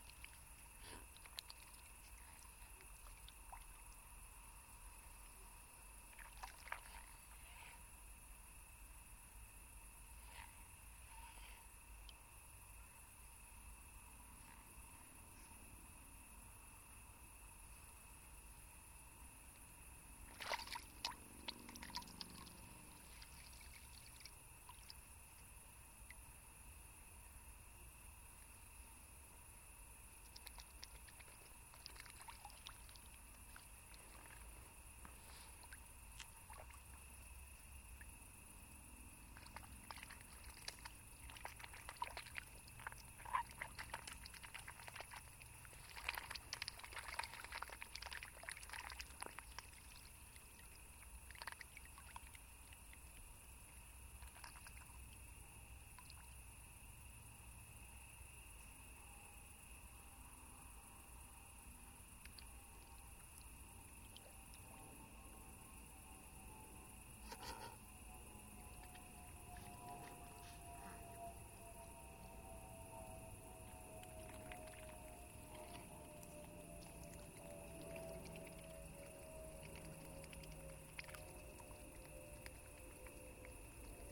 HluboÄepy Lake at night. 5 min. walk from the railway bridge. Two swans are begging for a piece of bread and hissing to threaten me. Crickets and cicades chirping as if we are somewhere in The Carpatian Mountains. The 18 meter deep little lake created in 1907 strong watersource in the stone quarry. One of the best places in Prague for swimming.
Prague-Prague, Czech Republic, 28 August 2008